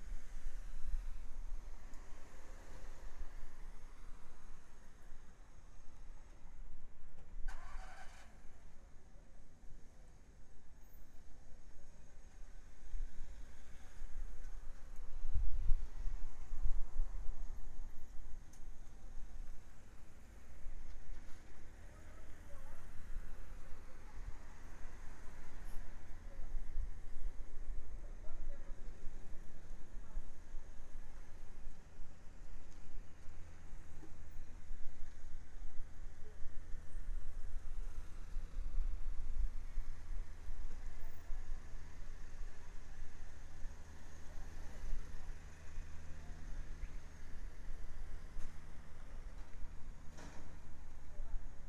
Gravação feita as 11h, com um Tascam iM2 e iphone. Recorded using a Tascam Im2 and iphone.
Campinas - SP, Brazil, 17 June 2015